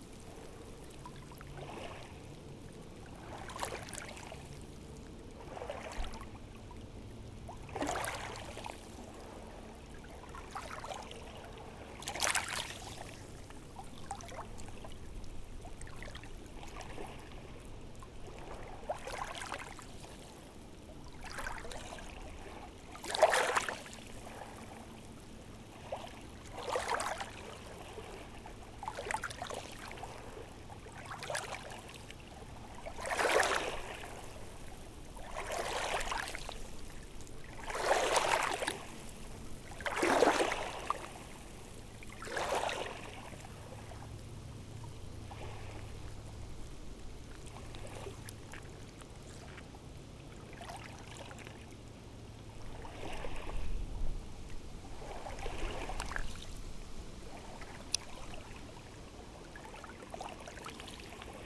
Kings, Subd. B, NS, Canada - High tide rippling stones at the base of the red cliff
A cove reached by wooden steps down the red earth cliffs of the Blomidon National Park. The sea here is part of the Minas Basin, which has the highest tidal rise and fall in the world. Even during this recording it has risen 4cm and swamps the microphones, which fortunately don't seem to mind so much.